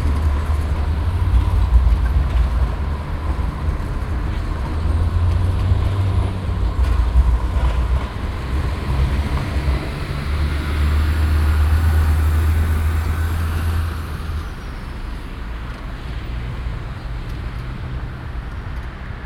cologne, barbarossaplatz, verkehrszufluss pfälzer strasse
strassen- und bahnverkehr am stärksten befahrenen platz von köln - aufname morgens
soundmap nrw: